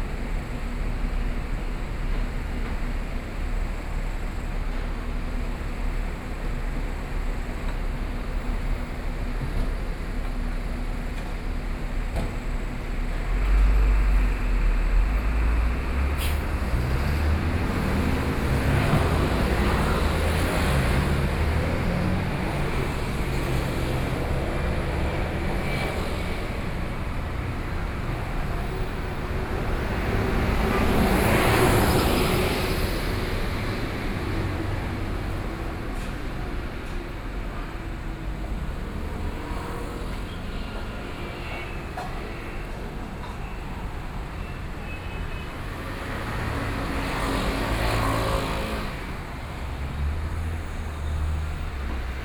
Place at the restaurant entrance, Publicity, Traffic Noise, A group of female students talking voice, Binaural recordings, Sony PCM D50 + Soundman OKM II